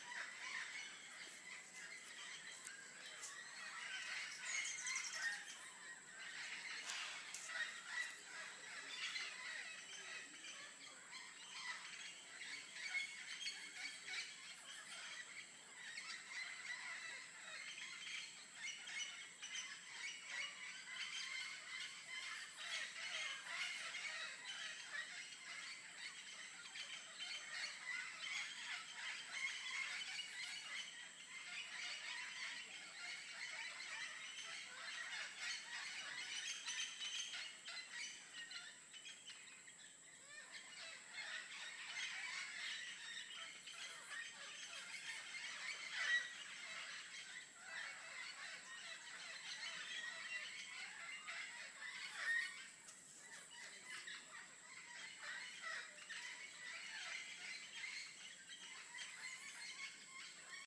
Isla Solarte, Red Hill Inn, Bocas del Toro, Panama - Aras at 6pm
Every morning and every night, beautiful aras, green parrots with some red stop by to just chirp away in the trees before flying away again. Sometimes it lasts 15 minutes sometimes 30. 20 to 50 couples just flying in pairs and the noise it makes surprises at first.